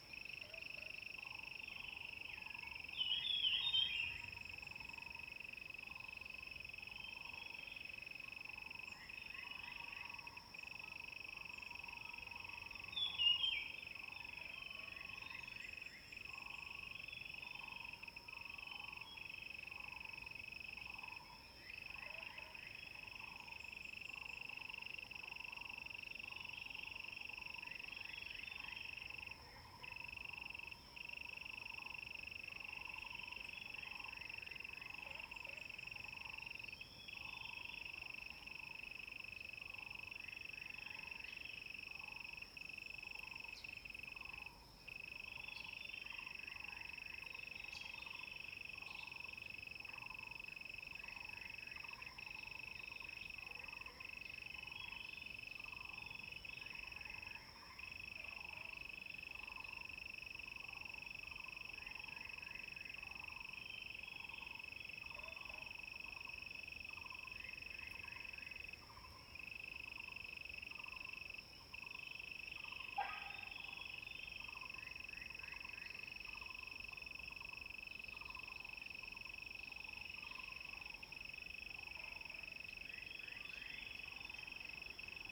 birds and Insects sounds, in the woods
Zoom H2n MS+XY